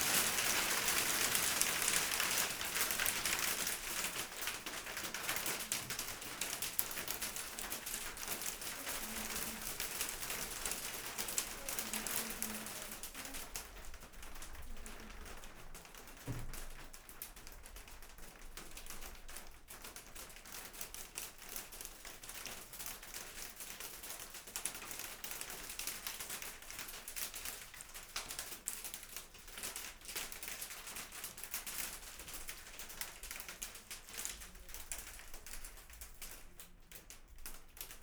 Port Carlisle, Cumbria, UK - Hailstorm
Hail falling on plastic roof. ST350 mic, binaural decode
United Kingdom, European Union, 26 April 2013, 15:20